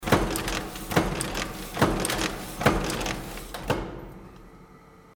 werkhalle - kettenherstellung - ältere maschine 02
aufnahme mit direktmikrophonie stereo
soundmap nrw - social ambiences - sound in public spaces - in & outdoor nearfield recordings
frohnstr, fa. pötz und sand